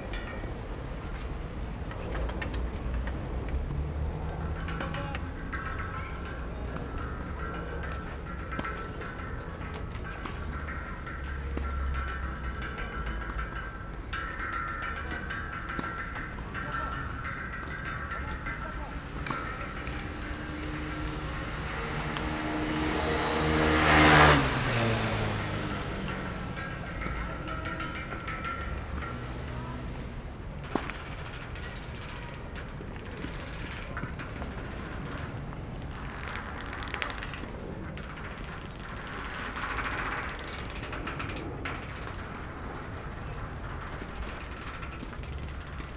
London Borough of Hammersmith and Fulham, Greater London, UK - Railings
Binaural recording of the railings surrounding the tennis court at Brook Green Park, London.
1 June 2013, 16:43